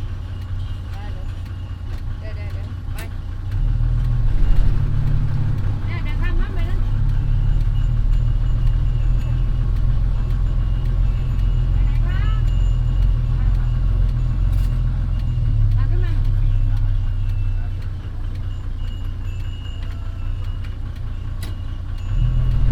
Amphoe Phunphin, Chang Wat Surat Thani, Thailand - Bus in Surathani - dick und rot und uralt
A bus from the train station to the center of Surathani. The vehicle is amazing, around 50 years old, very slow, a beautiful sound, picking up people wherever someone shows up.
5 August 2017